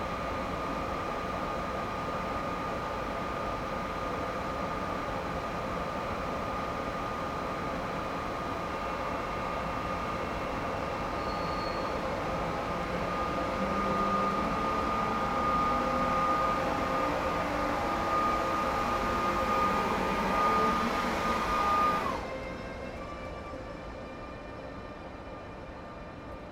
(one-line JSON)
{"title": "Berlin Ostbahnhof, platform - musical train", "date": "2013-11-25 08:30:00", "description": "a regional train arrives and departs, and makes some musical sounds\n(Sony PCM D50 internal mics)", "latitude": "52.51", "longitude": "13.44", "altitude": "44", "timezone": "Europe/Berlin"}